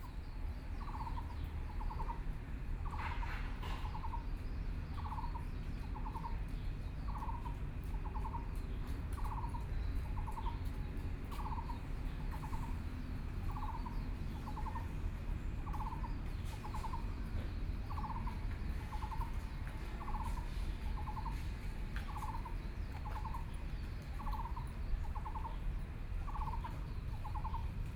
碧湖公園, Taipei City - Frogs sound
Frogs sound
Binaural recordings
Sony PCM D100+ Soundman OKM II